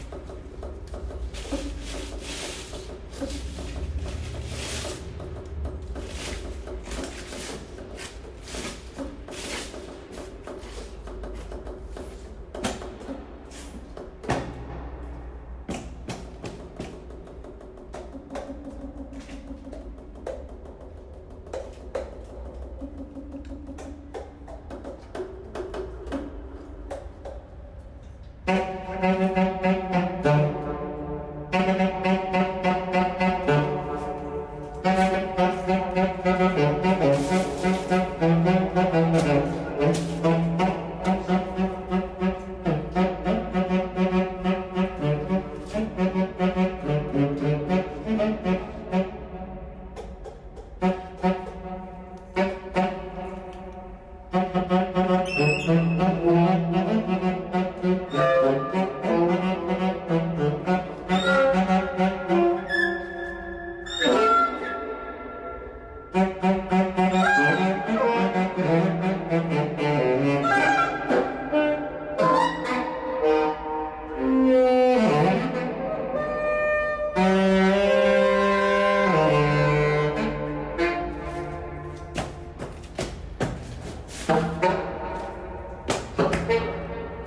Straße des 17. Juni, Großer Stern, Berlin, Deutschland - Berlin; vor dem Tunnel zur Siegessäule
Vor dem Tunnel kommen Altsax und Tenorsax zum Spiel